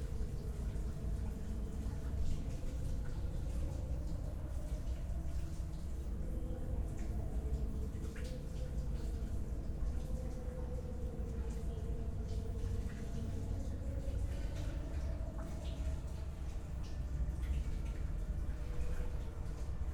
Berlin Bürknerstr., backyard window - distant music, dripping water
dripping water from my neighbour's bathroom, distant sounds from a music festival, Saturday evening in my backyard
(SD702, 2xNT1)